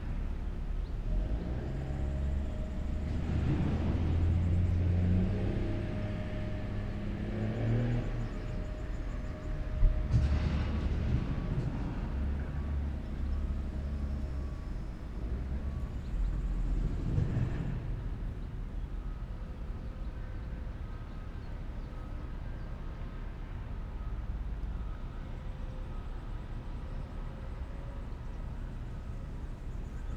Lipica, Sežana, Slovenia - Sežana quarry
Quarry in Sežana. Microphones Lom Usi Pro.